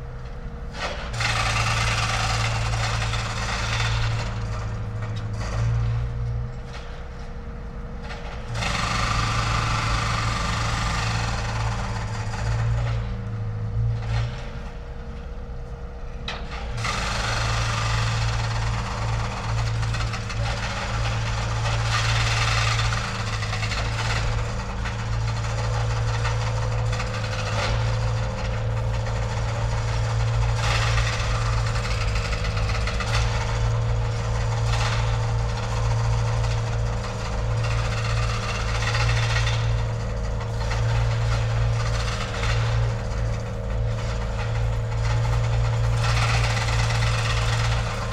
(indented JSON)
{
  "title": "Utena, Lithuania, building works",
  "date": "2022-01-26 16:15:00",
  "description": "Some tractor demolishing old building",
  "latitude": "55.49",
  "longitude": "25.58",
  "altitude": "108",
  "timezone": "Europe/Vilnius"
}